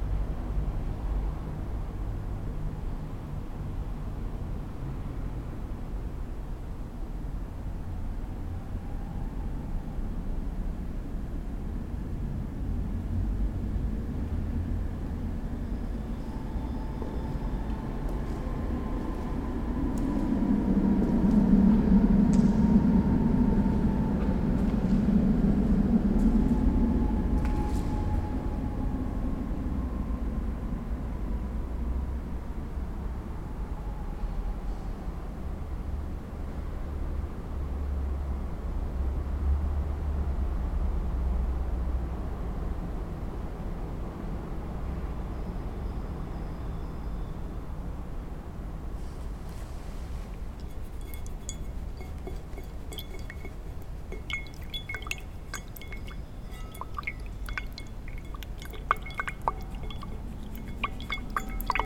Kostel sv. Jana Nepomuckého Na Skalce byl kdysi založen jako kaplička na původní vinici Skalka. V roce 1691 ji založil na dolním konci Karlova náměstí mniši z nedalekého kláštera Na Slovanech. V roce 1706 bylo při kapli ustanoveno bratrstvo pod ochranou Panny Marie ke cti Jana Nepomuckého, svatořečeného v roce 1729.